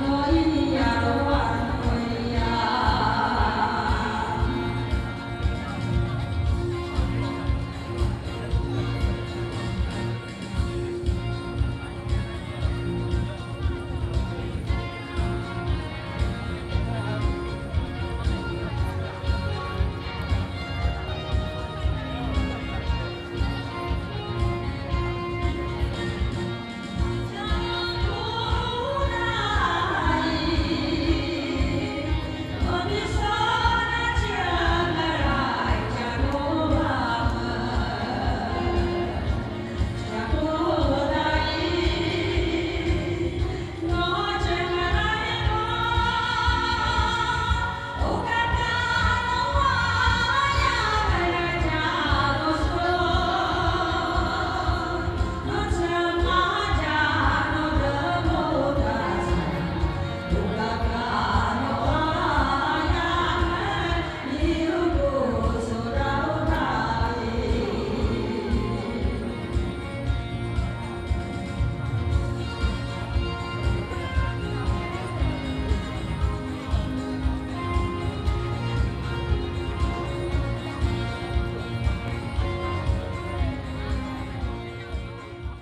土坂集會所, Daren Township - before a traditional tribal wedding
A dance before a traditional tribal wedding, Paiwan people, Many people participate in dancing
Taitung County, Daren Township, 東68鄉道70號, April 2018